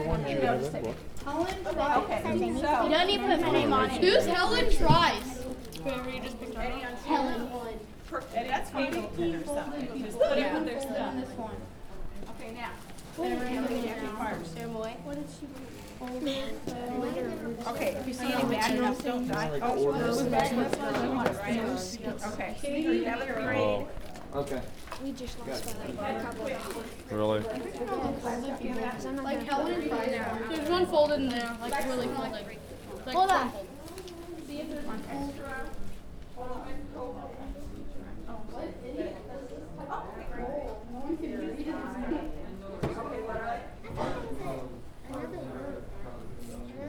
neoscenes: book sale at school
MO, USA, 25 February 2010